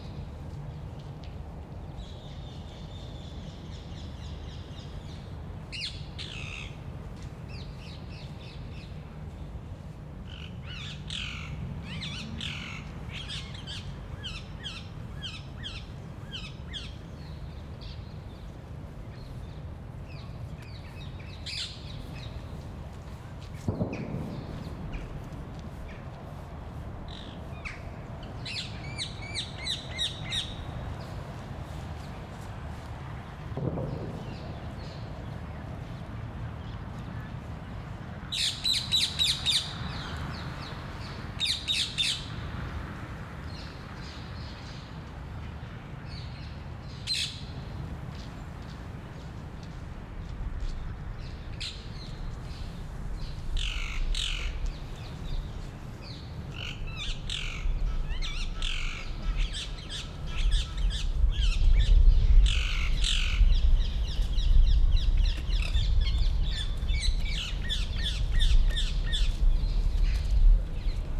Kievitslaan, Rotterdam, Netherlands - Birds
A few birds on a sunny winter Sunday. Recorded with zoom H8